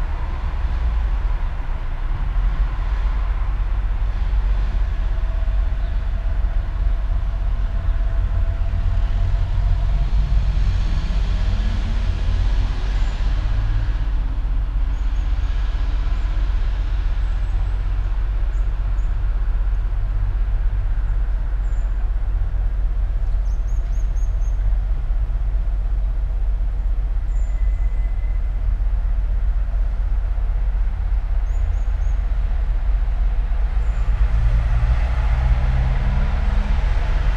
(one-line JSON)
{"title": "all the mornings of the ... - aug 22 2013 thursday 07:26", "date": "2013-08-22 07:26:00", "latitude": "46.56", "longitude": "15.65", "altitude": "285", "timezone": "Europe/Ljubljana"}